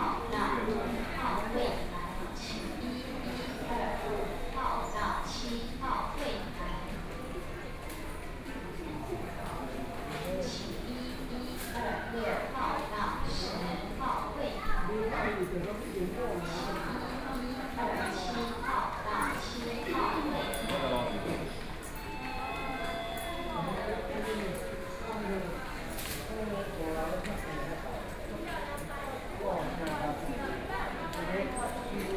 Lotung Poh-Ai Hospital, Yilan County - In the hospital
In the hospital in front of the counter prescriptions, Binaural recordings, Zoom H4n+ Soundman OKM II